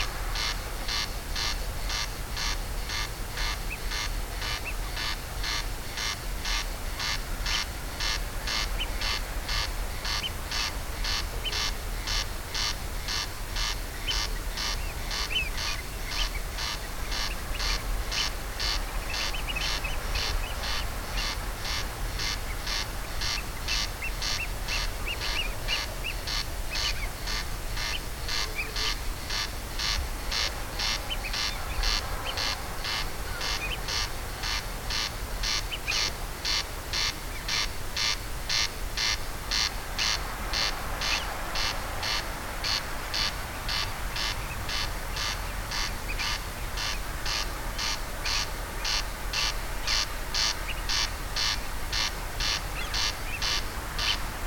Berneray - Berneray night. Corncrakes & seals
A late summer night on Berneray, Outer Hebrides. Corncrakes and seals in the distance. Stereo recording made on DPA 4060's.
Scotland, United Kingdom